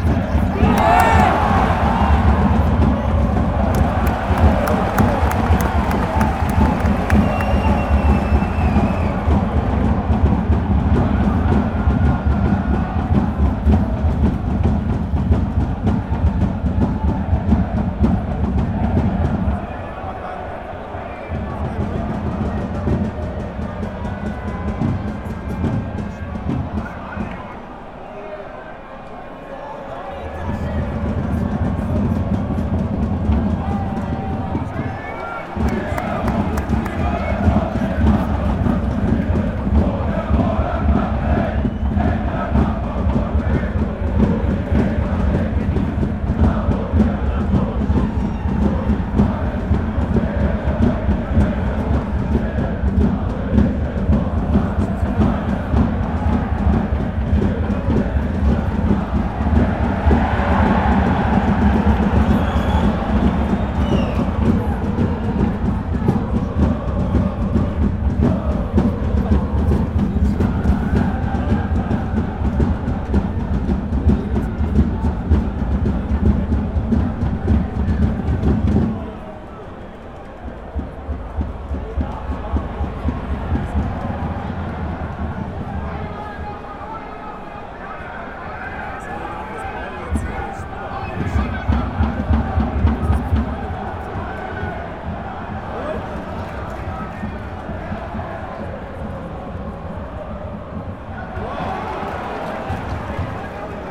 Millerntorstadion, guest fan block - FC St. Pauli - Werder Bremen
2. Fußball Bundesliga, FC St. Pauli against Werder Bremen, near the guest fan block